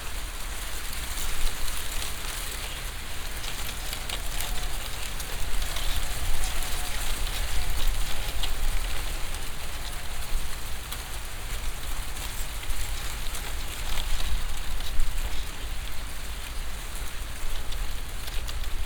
Radojewo, Poligonowa road - corn field
(binaural) standing in a wilted corn field among on a breezy day. The leaves rub against each other in the wind making a sort of wooden, very dense rustle. distorted at times. (sony d50 + luhd pm1bin)